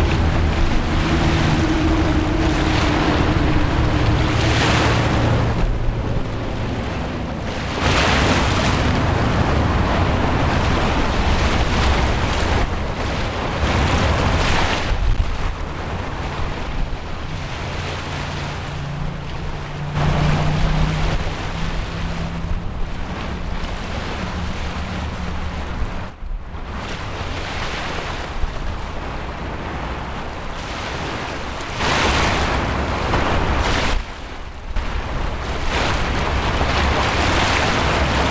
Sulfur Beach, North Shore
Recorded the noise of the sea, waves and motorway at a remote beach under the motorway on the North Shore.